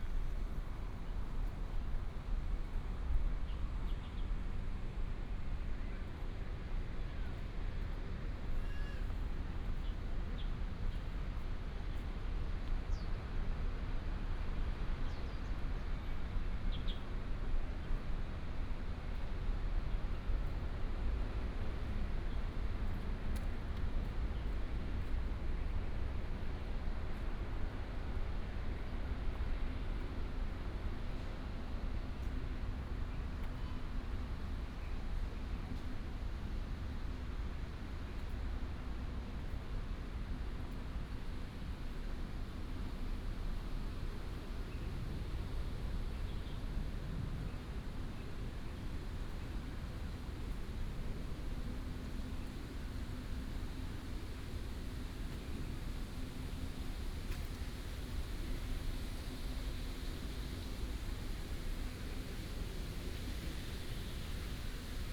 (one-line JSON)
{"title": "National Museum Of Natural Science, Taiwan - Botanical garden", "date": "2017-03-22 14:08:00", "description": "walking in the Botanical garden, Traffic sound", "latitude": "24.16", "longitude": "120.67", "altitude": "97", "timezone": "Asia/Taipei"}